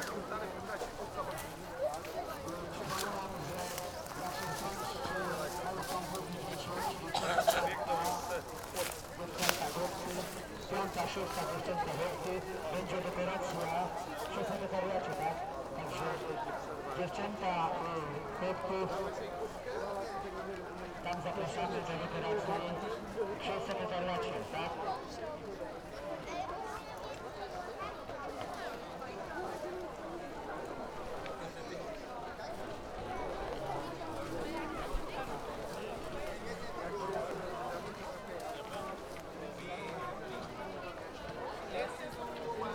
Poznan, Rusalka lake - children marathon
a children's running event. supporters and parents cheering the runners. moving towards the start line where boys run is about to begin. announcer nervously talking through a boom box. (sony d50)